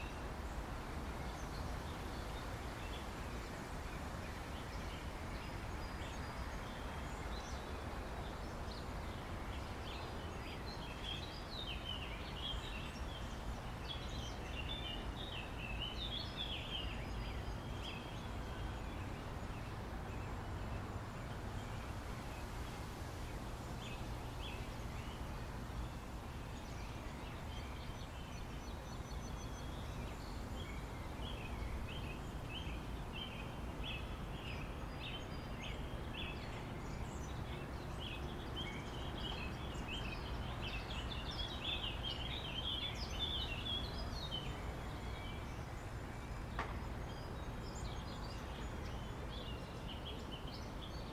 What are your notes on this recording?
This is the sounds of my backyard :) Pretty peaceful am I right